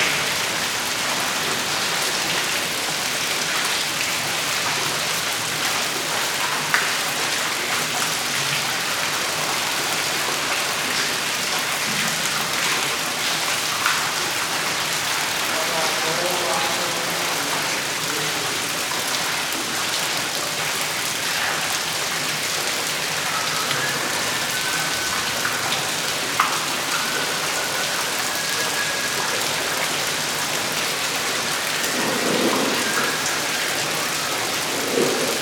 Antoniusschacht, Zürich, Schweiz - Tunnelbau S-Bahn
Zürich, Switzerland, December 1987